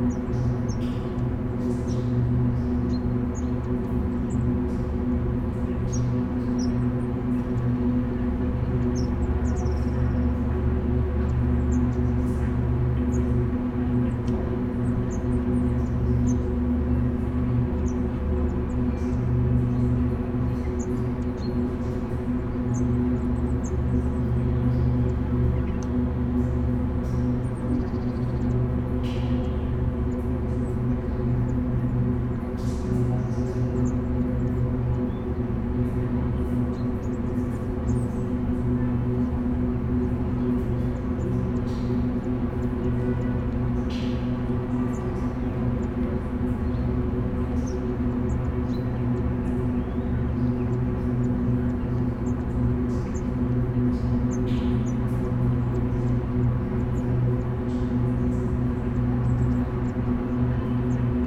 vent of an underground tank for the park water fountain
22 February 2010, 14:29